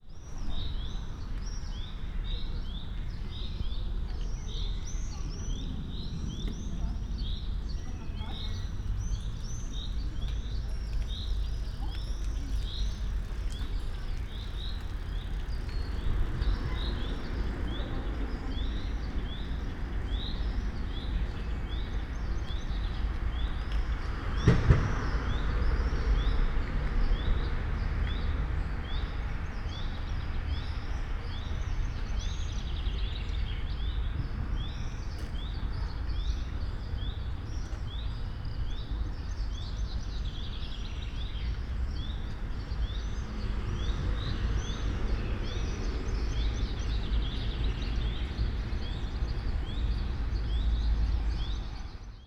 all the mornings of the ... - jun 8 2013 saturday 09:19